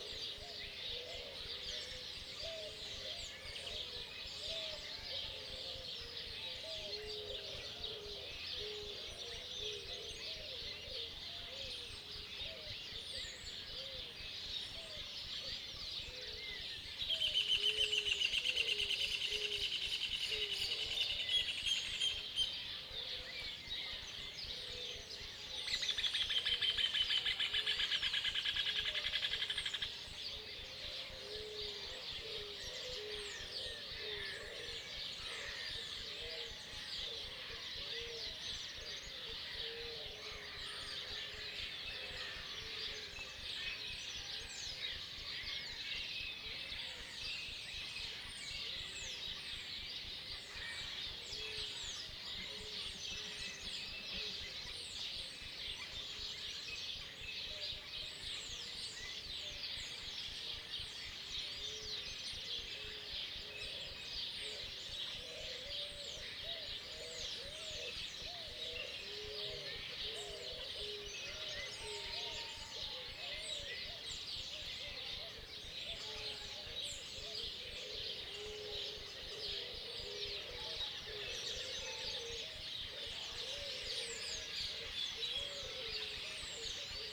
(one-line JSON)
{"title": "LM Coronel Segui, Provincia de Buenos Aires, Argentina - Very early in the morning, only sounds, no traffic much less humans", "date": "2021-10-12 17:04:00", "description": "October 12th beautiful morning, dawn and birds. Mud birds. Lambs. No traffic. Only Sounds", "latitude": "-34.88", "longitude": "-60.43", "altitude": "63", "timezone": "America/Argentina/Buenos_Aires"}